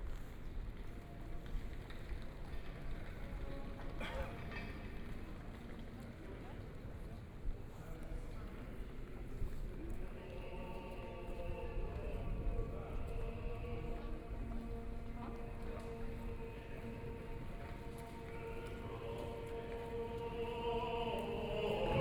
Marienplatz, 慕尼黑德國 - soundwalk
walking out of the platz, Street music, Tourists and pedestrians
Munich, Germany, 11 May 2014, 12:22